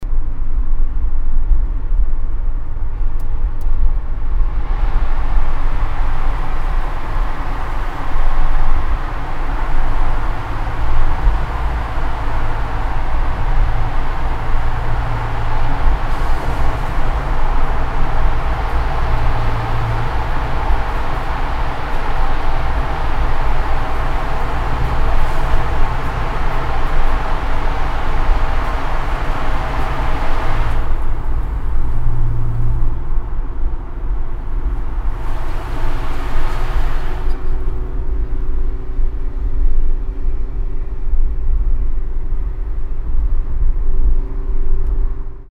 {"title": "cologne, stadtautobahn, zoobrücke, tunnelfahrt", "date": "2008-08-03 17:30:00", "description": "tunneldurchfahrt mit offenem pkw, abends\nsoundmap nrw:\nsocial ambiences/ listen to the people - in & outdoor nearfield recordings", "latitude": "50.95", "longitude": "7.01", "altitude": "48", "timezone": "Europe/Berlin"}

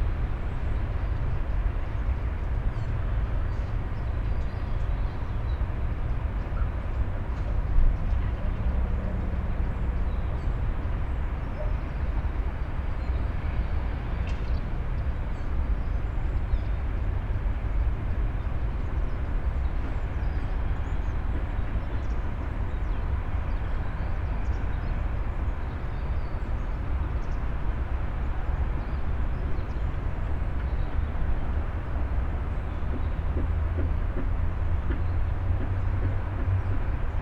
{"title": "Rue Vincent Auriol, Aix-en-Provence, Fr. - city hum from above", "date": "2014-01-08 17:25:00", "description": "city of Aix heard from above, mainly the hum and drone of cars and other vehicles. from afar it sometimes sounds good.\n(PCM D50, EM172)", "latitude": "43.54", "longitude": "5.45", "altitude": "259", "timezone": "Europe/Paris"}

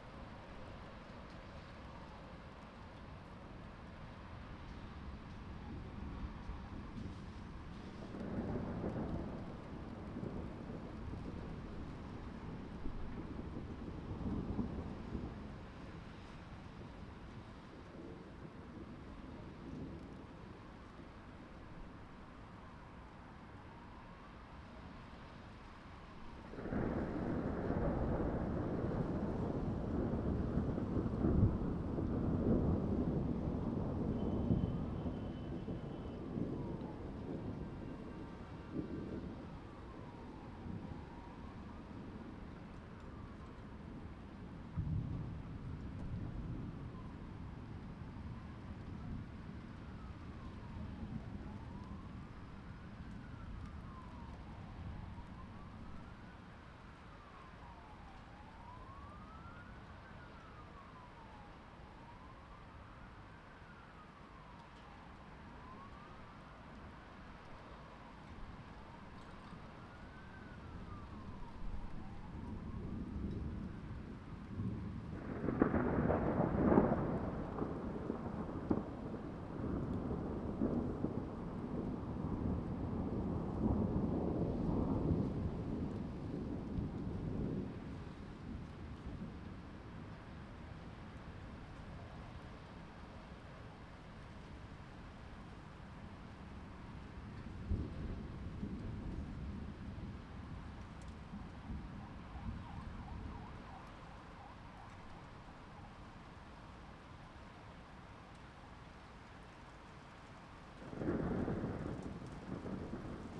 {"title": "Andrzeja Kmicica, Wrocław, Poland - Thunderstorm Over Wroclaw", "date": "2021-04-19 20:51:00", "description": "Thunderstorm Recorded over Wroclaw; recorded using Zoom H3-VR sitting on a window sill, hastily put there before the storm left! A good hour or so of recording, sadly clipped in places due to the volume of the storm. Distant sound of kestrels, and city ambience.", "latitude": "51.12", "longitude": "16.93", "altitude": "114", "timezone": "Europe/Warsaw"}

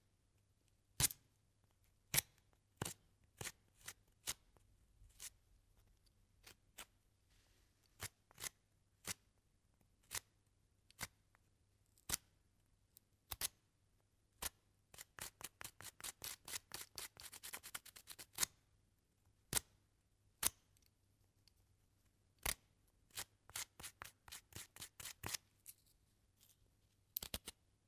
{"title": "erkrath, neandertal, altes museum, steinzeitwerkstatt - steinzeitwerkstatt - baumrinde und feuerstein", "description": "klänge in der steinzeitwerkstatt des museums neandertal - hier: bearbeitung von baumrinde mit feuerstein\nsoundmap nrw: social ambiences/ listen to the people - in & outdoor nearfield recordings, listen to the people", "latitude": "51.22", "longitude": "6.95", "altitude": "97", "timezone": "GMT+1"}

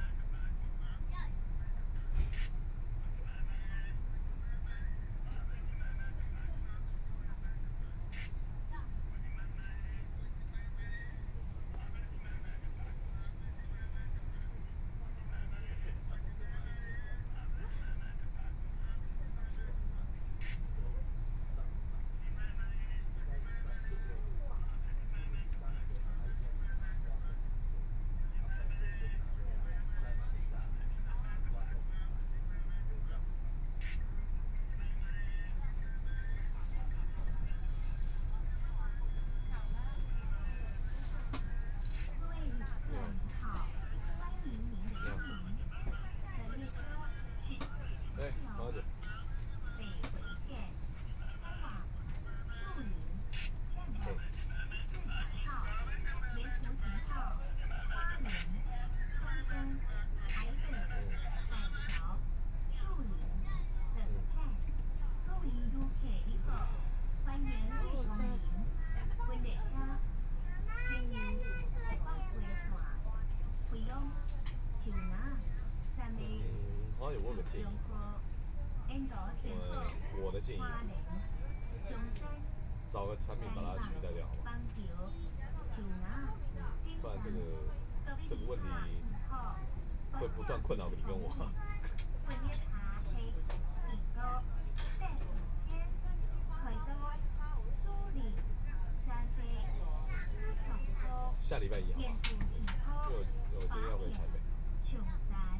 {"title": "Hualien Station, Taiwan - Interior of the train", "date": "2014-01-18 14:40:00", "description": "Interior of the train, Binaural recordings, Zoom H4n+ Soundman OKM II", "latitude": "23.99", "longitude": "121.60", "timezone": "Asia/Taipei"}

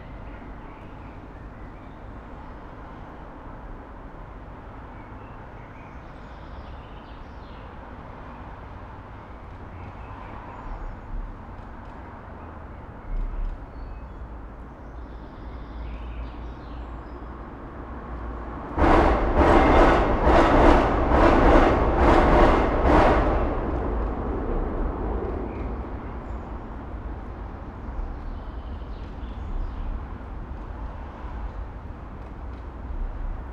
{"title": "Praha, Park Karlov, under bridge", "date": "2011-06-22 15:25:00", "description": "soundscape under bridge. under the street level there is another layer fo trains.", "latitude": "50.07", "longitude": "14.43", "altitude": "229", "timezone": "Europe/Prague"}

